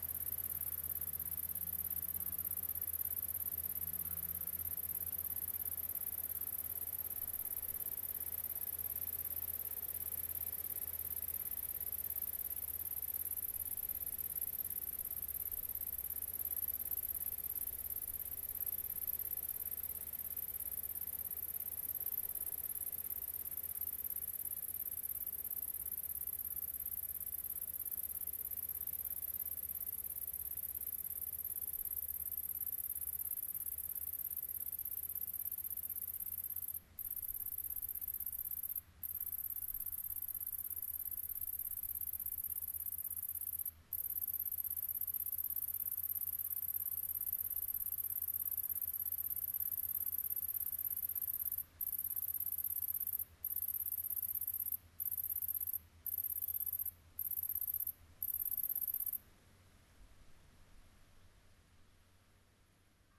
{
  "title": "Chanceaux, France - Locusts",
  "date": "2017-07-29 18:30:00",
  "description": "Near an sunny path in a green nature everywhere, locusts are singing into the grass, and stop baldly nobody knows why !",
  "latitude": "47.54",
  "longitude": "4.70",
  "altitude": "399",
  "timezone": "Europe/Paris"
}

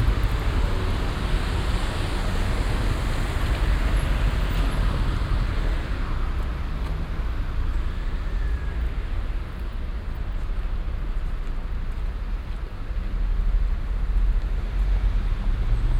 {
  "title": "cologne, barbarossaplatz, verkehrszufluss neue weyerstrasse - koeln, barbarossaplatz, verkehrszufluss neue weyerstrasse",
  "description": "strassen- und bahnverkehr am stärksten befahrenen platz von köln - aufnahme: morgens\nsoundmap nrw:",
  "latitude": "50.93",
  "longitude": "6.94",
  "altitude": "57",
  "timezone": "GMT+1"
}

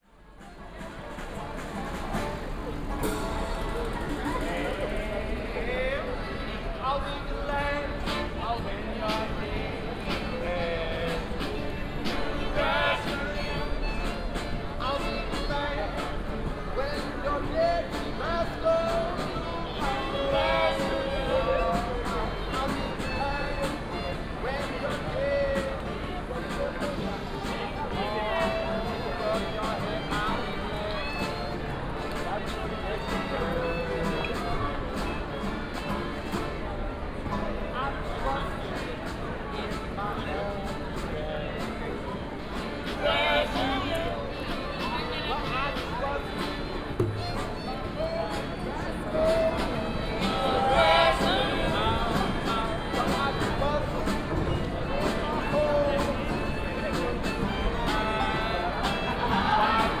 {
  "title": "Rome, Trastevere, Piazza di San Calisto - street band",
  "date": "2014-09-02 20:09:00",
  "description": "(binaural)\none of many street bands performing around the Trastevere district in the evening, entertaining tourist and locals who crowd the nearby restaurants and cafes at that time of the day.",
  "latitude": "41.89",
  "longitude": "12.47",
  "altitude": "28",
  "timezone": "Europe/Rome"
}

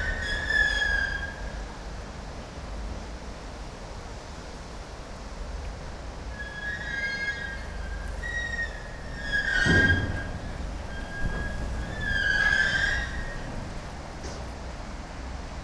{
  "title": "Teofila Mateckiego, Poznan - scrapyard delivery",
  "date": "2018-10-24 11:37:00",
  "description": "a delivery arrived at the scrapyard. recorded behind an aluminum fence that rattles in the wind. metal junk being moved towards one place and then lifted onto a scrap pile. dried bushes rustling. a busy railroad crossing to the left. (roland r-07)",
  "latitude": "52.47",
  "longitude": "16.90",
  "altitude": "100",
  "timezone": "Europe/Warsaw"
}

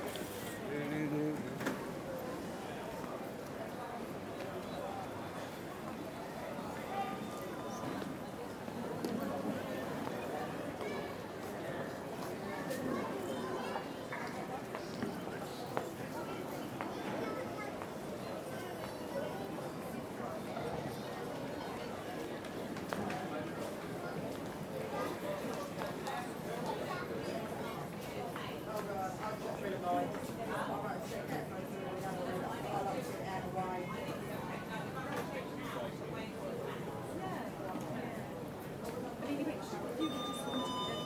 {
  "title": "london stansted, security check",
  "description": "recorded july 19, 2008.",
  "latitude": "51.89",
  "longitude": "0.26",
  "altitude": "118",
  "timezone": "GMT+1"
}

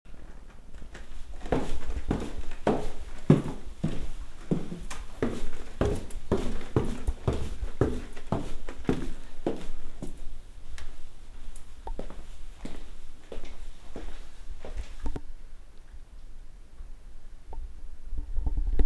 {
  "title": "walking down stairs",
  "description": "walking down my stairs, Chickerell",
  "latitude": "50.62",
  "longitude": "-2.50",
  "altitude": "28",
  "timezone": "Europe/Berlin"
}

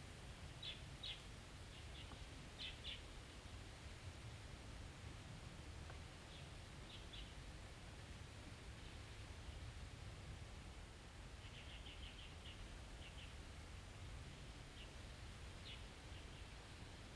{
  "title": "大富村, Guangfu Township - Small village",
  "date": "2014-10-08 15:29:00",
  "description": "Birdsong, Traffic Sound, Next to farmland, The sound of distant aircraft, Small village\nZoom H2n MS+ XY",
  "latitude": "23.60",
  "longitude": "121.41",
  "altitude": "205",
  "timezone": "Asia/Taipei"
}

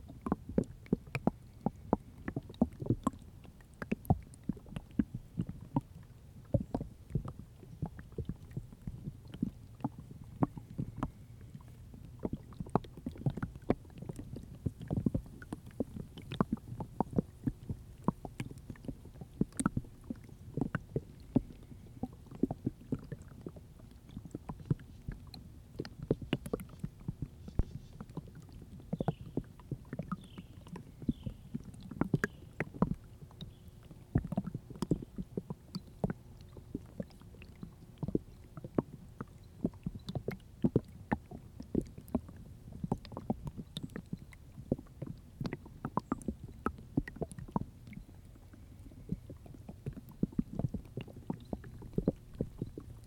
{
  "title": "Greentree Park Creek Ice, Kirkwood, Missouri, USA - Greentree Park Creek Ice",
  "date": "2021-02-07 15:52:00",
  "description": "Dual MS recording of creek flowing over rocks and under ice combined with hydrophone recording from ice. Some birds chime in at 26 secs.",
  "latitude": "38.56",
  "longitude": "-90.45",
  "altitude": "125",
  "timezone": "America/Chicago"
}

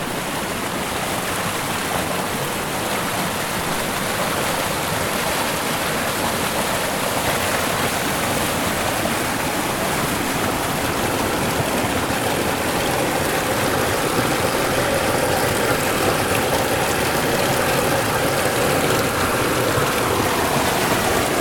{
  "title": "Parc National des Pyrénées, France - River",
  "date": "2012-07-27 15:51:00",
  "latitude": "42.94",
  "longitude": "-0.25",
  "altitude": "1511",
  "timezone": "Europe/Paris"
}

